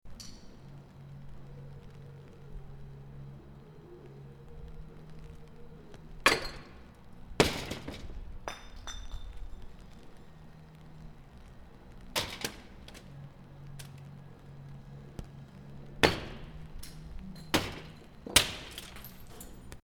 Montreal: RCA Building (outside) - RCA Building (outside)
equipment used: Stereo field recorder (Zoom H2)
Just outside the building by a dumpster